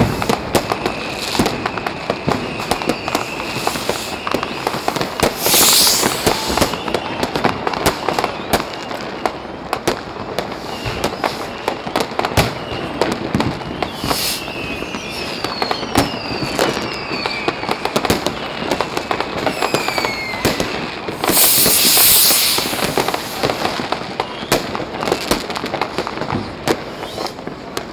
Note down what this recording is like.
Tens of thousands of people on the banks of the river Spree letting off fireworks to celebrate New Years Eve / Day. VERY loud / intense - a lot of fireworks! Binaural recording direct to a Zoom HN4. Some level adjustment and EQ made in Logic Studio.